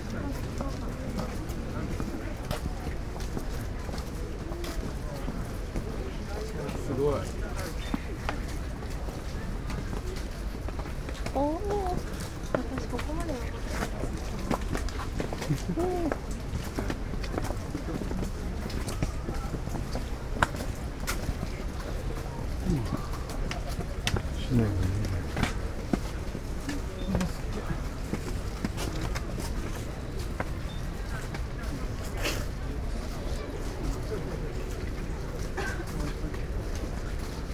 stairs, Ginkakuji gardens - people and their breath(lessness)
gardens sonority, from above
Kyōto-shi, Kyōto-fu, Japan, 2 November 2014, 15:02